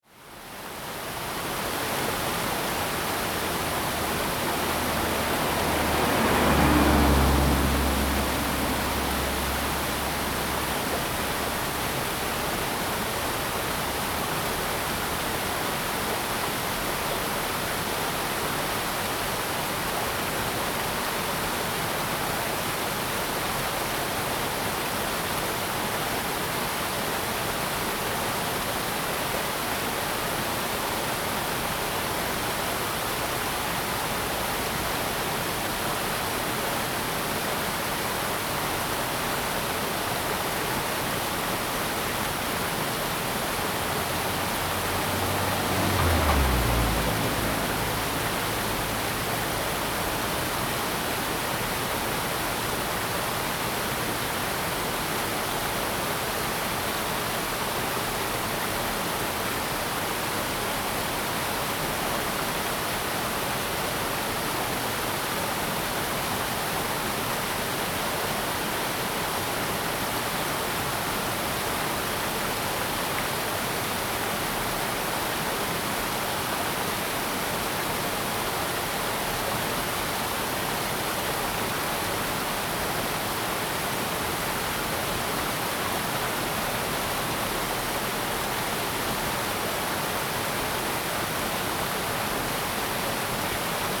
{
  "title": "Zhonggua Rd., Puli Township - Streams and traffic sound",
  "date": "2016-04-26 13:28:00",
  "description": "Streams and traffic sound\nZoom H2n MS+XY",
  "latitude": "23.95",
  "longitude": "120.91",
  "altitude": "576",
  "timezone": "Asia/Taipei"
}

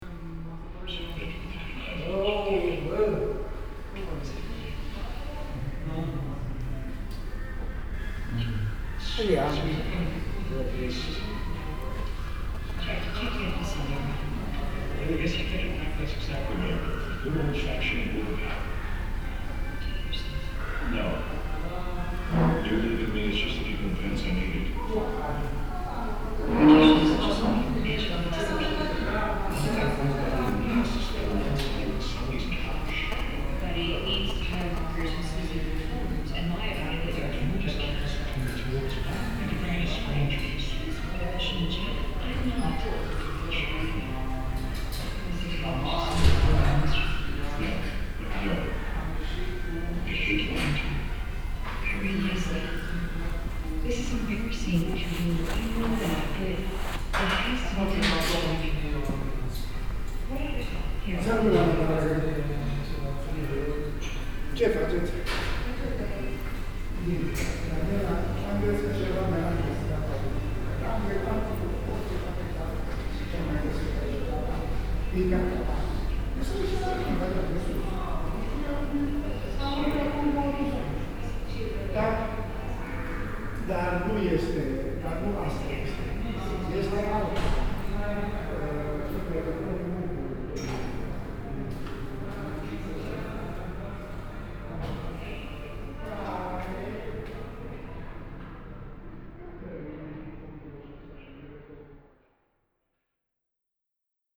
{
  "title": "Cetatuia Park, Klausenburg, Rumänien - Cluj-Napoca - Hotel Belvedere - Foyer-Bar",
  "date": "2013-11-21 14:30:00",
  "description": "At the Foyer - Bar of the Hotel. The sound of a televison plus some music from the bar reverbing in the stone plated hall like open room. A waiter walking along serving two customers.\nSoundmap Fortess Hill/ Cetatuia - topographic field recordings, sound art installations and social ambiences",
  "latitude": "46.77",
  "longitude": "23.58",
  "altitude": "395",
  "timezone": "Europe/Bucharest"
}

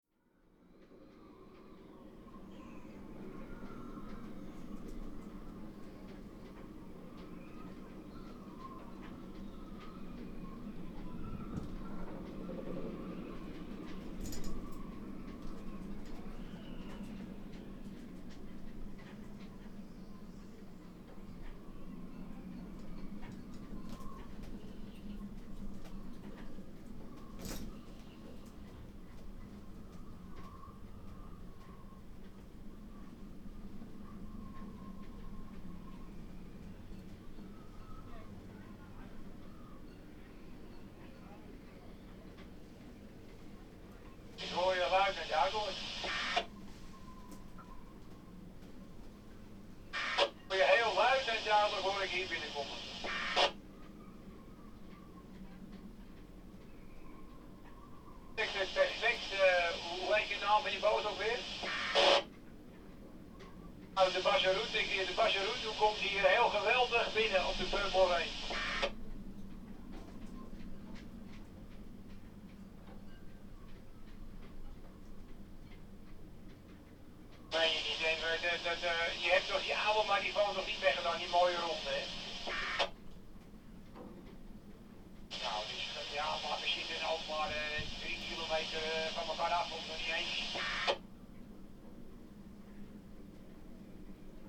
{
  "title": "workum, het zool: marina, berth h - the city, the country & me: marina, aboard a sailing yacht",
  "date": "2009-07-18 13:43:00",
  "description": "wind flaps the tarp, radio traffic on channel 73\nthe city, the country & me: july 18, 2009",
  "latitude": "52.97",
  "longitude": "5.42",
  "altitude": "1",
  "timezone": "Europe/Berlin"
}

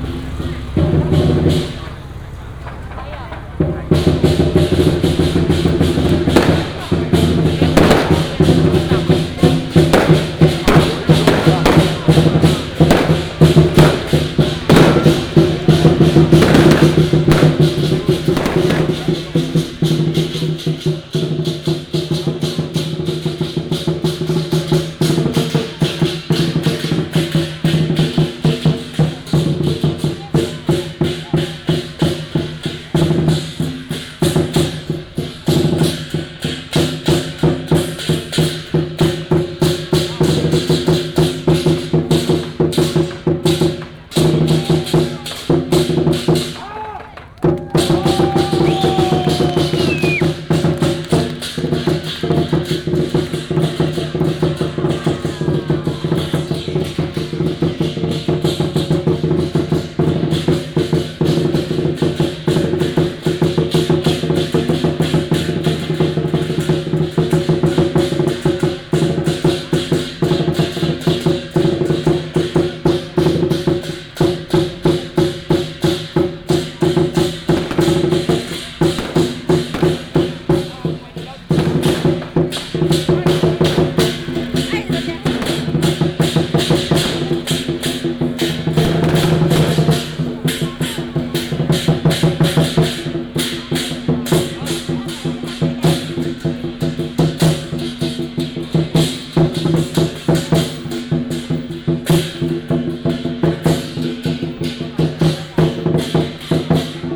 {
  "title": "Baixi, Tongxiao Township 苗栗縣 - Traditional temple fair",
  "date": "2017-03-09 09:19:00",
  "description": "Matsu Pilgrimage Procession, Crowded crowd, Fireworks and firecrackers sound",
  "latitude": "24.56",
  "longitude": "120.71",
  "altitude": "10",
  "timezone": "Asia/Taipei"
}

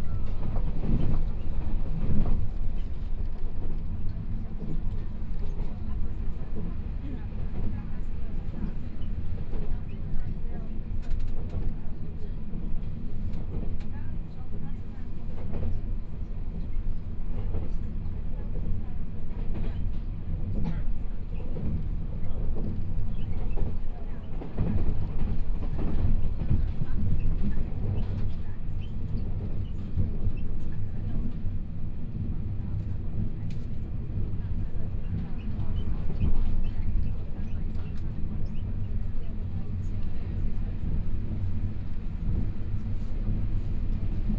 {
  "title": "Ji'an Township, Hualien County - After the accident",
  "date": "2014-01-18 14:00:00",
  "description": "Taroko Express, Interior of the train, to Hualien Station, Binaural recordings, Zoom H4n+ Soundman OKM II",
  "latitude": "23.95",
  "longitude": "121.56",
  "timezone": "Asia/Taipei"
}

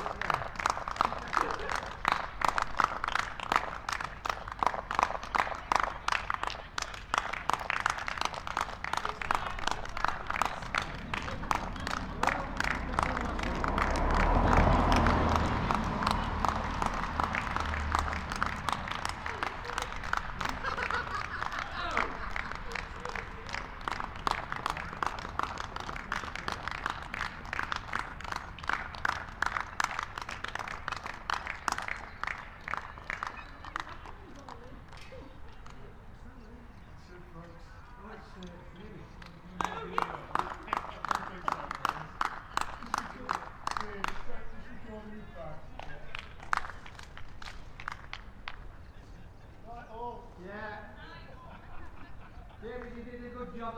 {
  "title": "Unnamed Road, Malton, UK - NHS#clapforcarers ...",
  "date": "2020-04-02 19:54:00",
  "description": "NHS#clapforcarers ... people were asked to come out and clap for all the workers ... carers ... all those of the NHS ... at 20:00 ... a number of our small community came out to support ... SASS on tripod to Zoom H5 ...",
  "latitude": "54.12",
  "longitude": "-0.54",
  "altitude": "76",
  "timezone": "Europe/London"
}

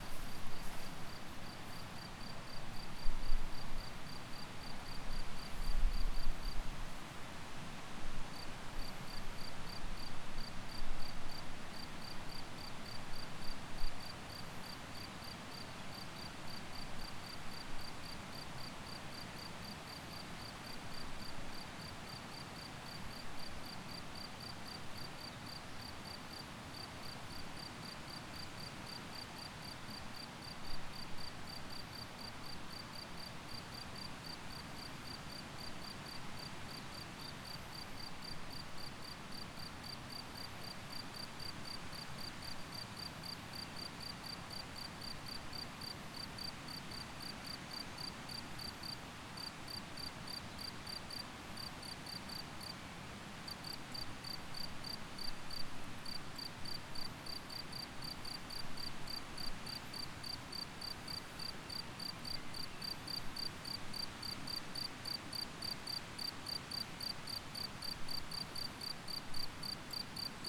{
  "title": "Montpezat-Sous-Bauzon, France - Rivière, Champ, grillons",
  "date": "2012-05-27 17:26:00",
  "description": "recherche de grillons.... Looking for crickets",
  "latitude": "44.71",
  "longitude": "4.18",
  "altitude": "562",
  "timezone": "Europe/Paris"
}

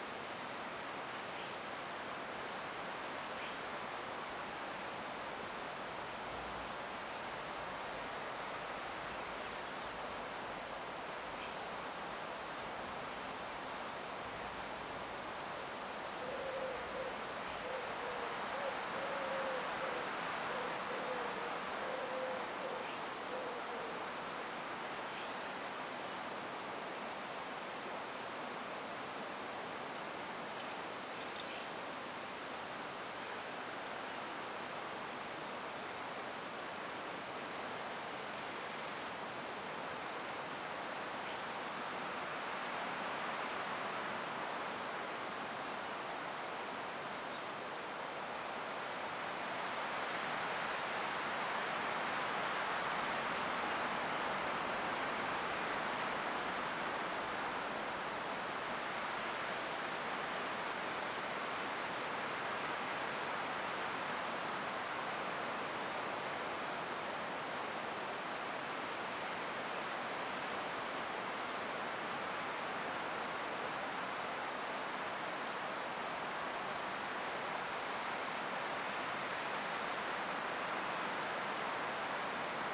{
  "title": "Gowbarrow Hill - Forest recording",
  "date": "2020-09-09 06:50:00",
  "description": "Sony PCM-A10 and LOM Mikro USI's left in the forest while making breakfast and unsetting camp.",
  "latitude": "54.59",
  "longitude": "-2.91",
  "altitude": "371",
  "timezone": "Europe/London"
}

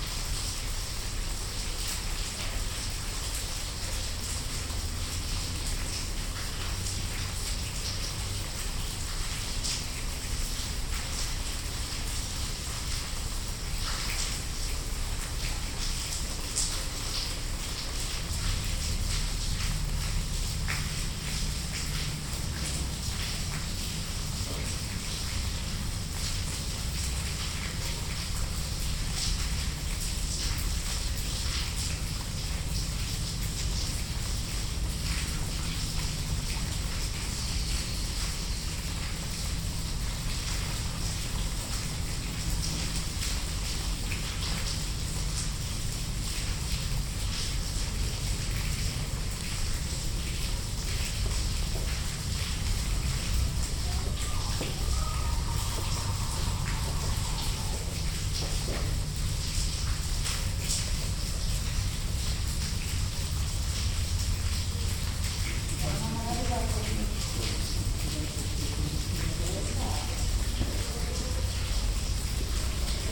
{
  "title": "Venice, Province of Venice, Italy - piogga regen rain",
  "date": "2012-04-04 16:03:00",
  "description": "recorded in an angle of aisle on the San Michele cemetery; aufgenommen im Seitengang der Kirche des Friedhofs von Venedig San Michele; registrato sull isola di san michele, in un angolo del chiostro",
  "latitude": "45.45",
  "longitude": "12.35",
  "altitude": "1",
  "timezone": "Europe/Rome"
}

